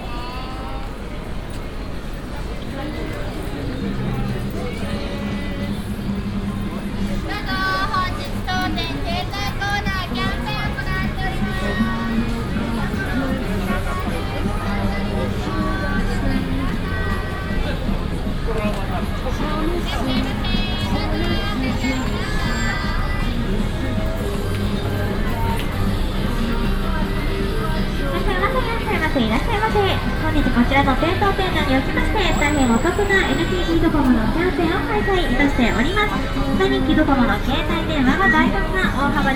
tokyo, akihabara, street atmosphere
street atmosphere at akihabara district at noon daytime
international city scapes - social ambiences and topographic field recordings
Japan, 27 July 2010, 15:20